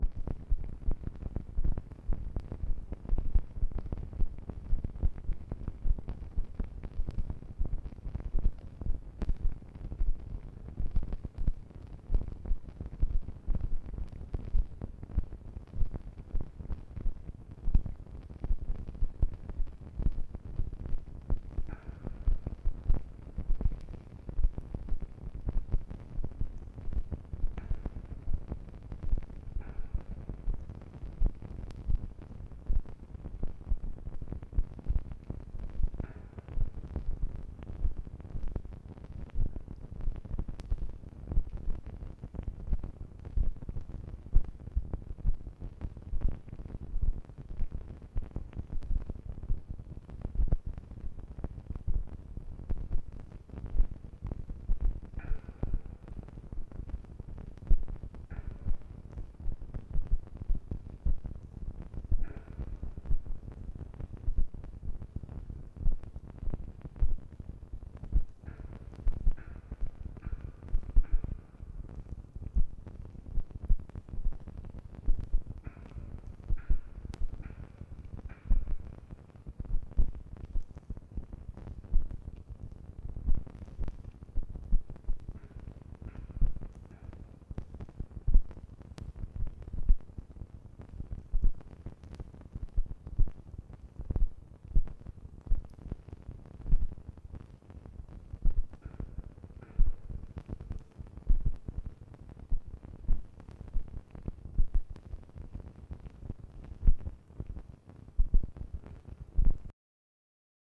Anyksciai, Lithuania, in the crack of ice
contact microphones placed in the crack of ice on a bank of river